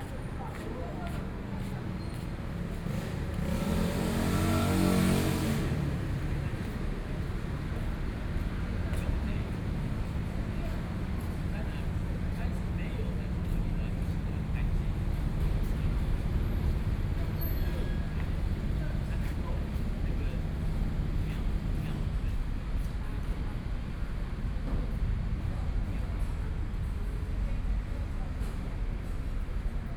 Morning in the park, Traffic Sound, Environmental sounds, Birdsong, A group of elderly people chatting
Binaural recordings